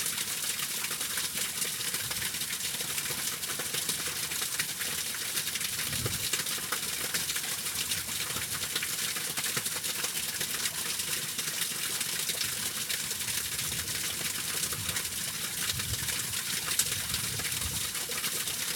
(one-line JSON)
{"title": "frozen waterfall near Baltic Sea", "date": "2010-01-30 18:06:00", "description": "cold winter day after some fresh snow we take a short walk out to the sea.", "latitude": "54.48", "longitude": "10.15", "altitude": "6", "timezone": "Europe/Tallinn"}